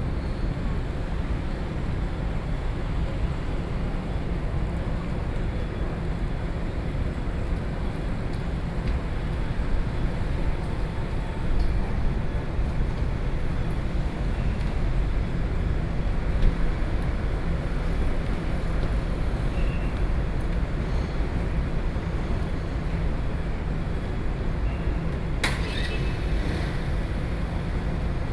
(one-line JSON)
{"title": "Taoyuan Station - Environmental Noise", "date": "2013-08-12 13:25:00", "description": "Square in front of the station, The air-conditioned department stores noise, Traffic Noise, Sony PCM D50 + Soundman OKM II", "latitude": "24.99", "longitude": "121.31", "altitude": "101", "timezone": "Asia/Taipei"}